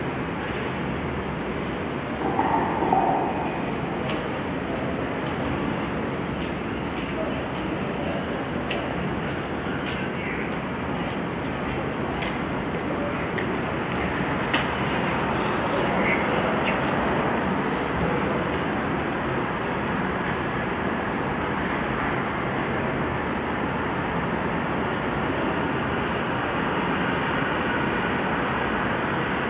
Ambient sounds from the Xingfuyicun 8th alleyway (upside the Worker Stadium north Rd) - Ambient sounds from the Xingfuyicun 8th alleyway
This site is mapped and based on the satellite image. You will hear the sounds of the residential area consist of engines ignition, urban construction and some misty ambient voices by the pedestrian.